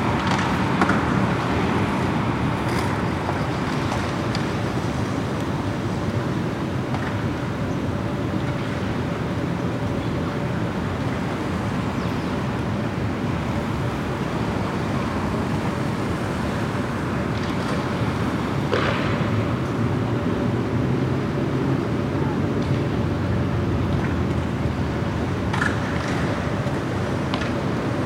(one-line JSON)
{"title": "Northwest Washington, Washington, DC, USA - Weekend skateboarding at the Freedom Plaza", "date": "2016-10-18 10:00:00", "description": "Recording at Freedom Plaza, Washington, District of Columbia on a Sunday afternoon. There were about 20-30 skateboarders at this time and there is some light automobile traffic featured on this recording.", "latitude": "38.90", "longitude": "-77.03", "altitude": "21", "timezone": "America/New_York"}